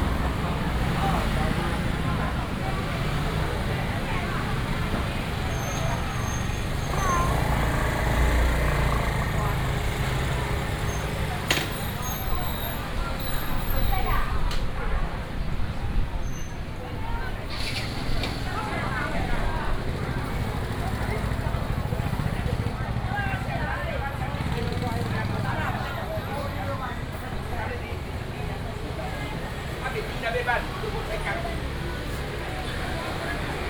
Wenchang St., East Dist., Chiayi City - walking in the Street
Walk through the traditional market, Traffic sound